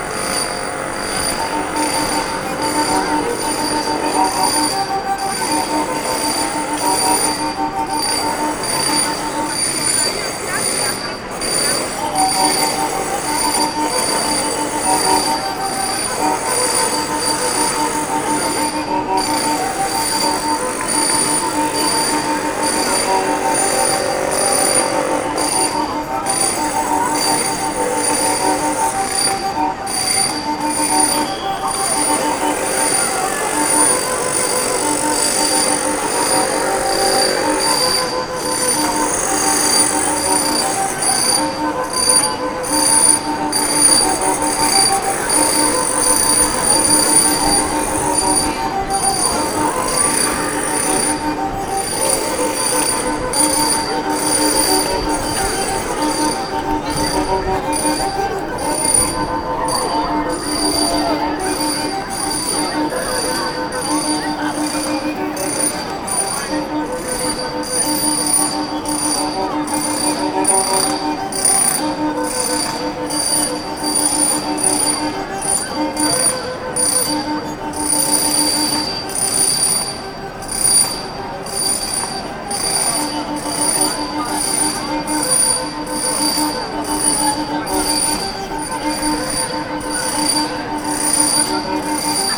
COUCOU LES NAUFRAGES ! La vielle roue et le marteaux - La vielle roue et le marteaux
…Vagabondage.. errance... quelques fois blackboulés..souvent marginaux... sois disant dingues ! Human Alarm... "chevaux de génies" et autres Chevaliers à la joyeuse figure…
observed with : ++>